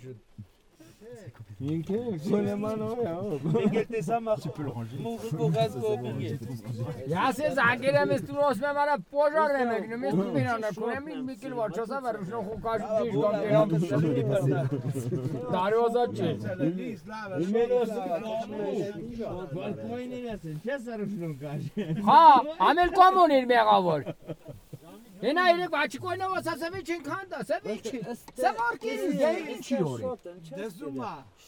Erablur, Arménie - Farmers and the terrible Samo
A violent storm went on us. We saw a 4x4 car driving, the owner was searching us. In aim to protect us from the rain, he placed us in a small caravan, inside we were 11 persons ! It was so small that my feet were on another person. As Armenia is like this, these farmers shared with us vodka, cheese, tomatoes, cognac and coffee. This is the recording of the time we spent with them. The terrible farmer called Samo is speaking so loud ! It's a very friendly guy.
Armenia, 4 September 2018